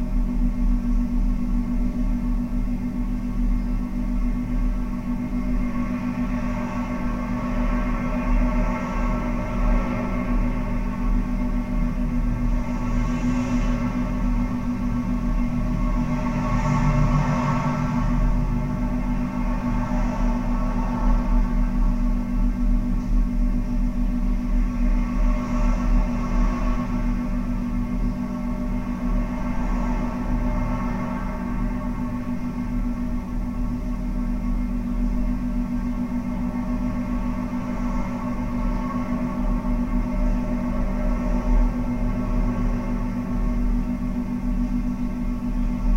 Al Quoz - Dubai - United Arab Emirates - Traffic Through Metal Entrance Gates
Traffic recorded through the metal gates at the entrance of the complex known as the "Court Yard". Recorded using a Zoom H4 and Cold Gold contact microphones. "Tracing The Chora" was a sound walk around the industrial zone of mid-Dubai.
Tracing The Chora
16 January 2016, 14:38